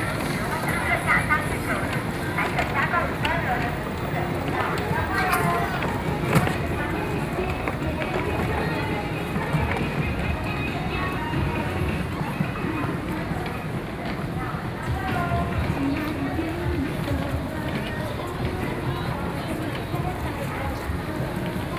Emei St., Wanhua Dist., Taipei City - SoundWalk
Taipei City, Taiwan, 31 October 2012